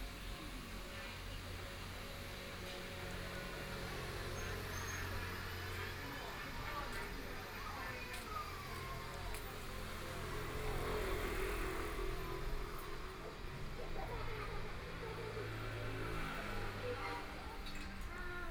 Zhaozhou Road, Shanghai - Walking on the street
Walking through the old neighborhoods, Walking on the street, About to be completely demolished the old community, Binaural recordings, Zoom H6+ Soundman OKM II
Shanghai, China